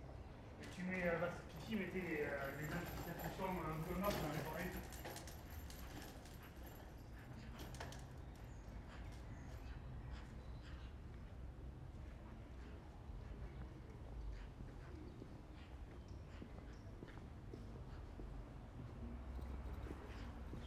Rue des Faures, Bordeaux, France - Saturday night under covid-19
A walk in Bordeaux a saturday night.
8:00 p.m. applause. Almost empty streets. Only the poorer people are outside. 5 magpies.
Recorded with a pair of LOM Usi pro and Zoom H5.
40 minutes of recording cut and edited.
France métropolitaine, France